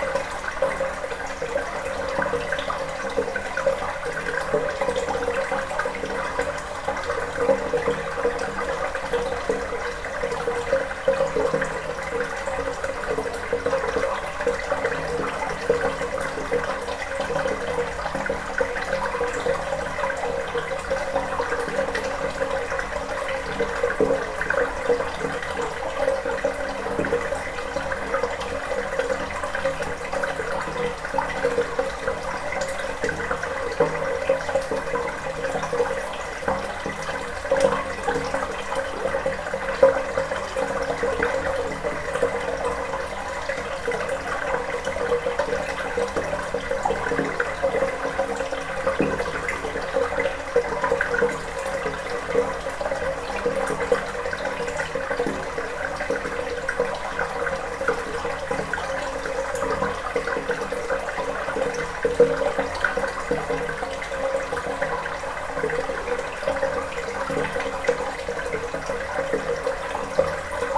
Ottange, France - A singing pipe
In an underground mine, the sound of a singing pipe, water is flowing inside.
27 May 2015, ~21:00